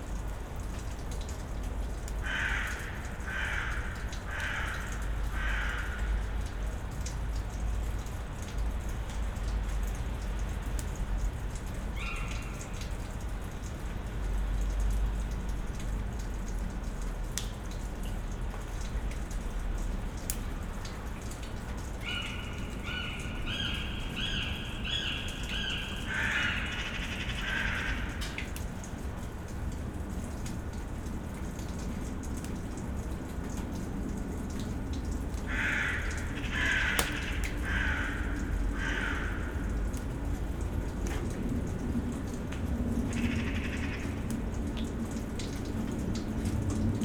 Berlin Bürknerstr., backyard window - melting snow, birds
melting snow, drips and drops, raptor (which one?) confuses crows and magpies.
15 December, 10:55